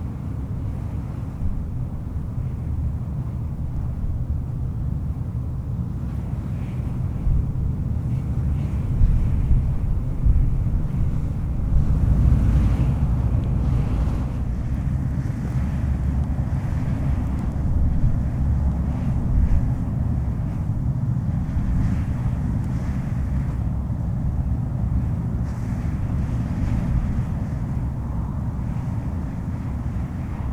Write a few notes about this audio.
Evident in this recording, with mic placed directly on concrete mirror concave face, is a noticeable, resonant hum below 150Hz. Wind gusts on the cliffs were quite strong.